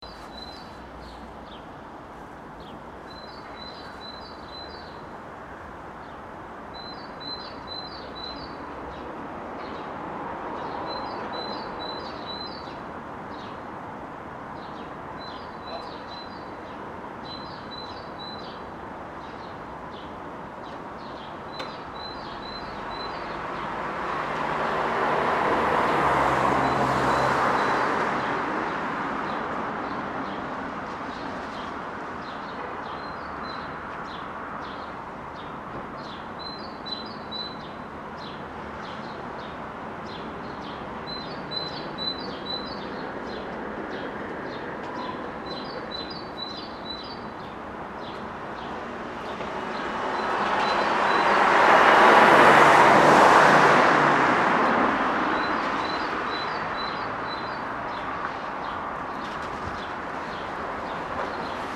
Донской пр-д, строение, Москва, Россия - Near the Barber shop Muscovite
2nd Donskoy passage. Near the Barber shop "Muscovite". You can hear the birds singing, the car is going, the birds are singing again, the car is going again, someone is Parking, then the car starts, then beeps and other noises of the street. Day. Clearly. Without precipitation.
Центральный федеральный округ, Россия, 16 May 2020, 14:30